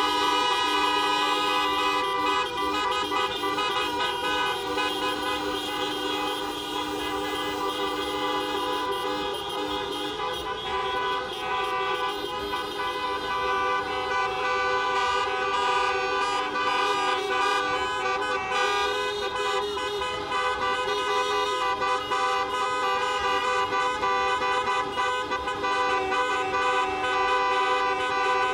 Tun. Rogier, Bruxelles, Belgique - European demonstration of Taxi drivers against Uber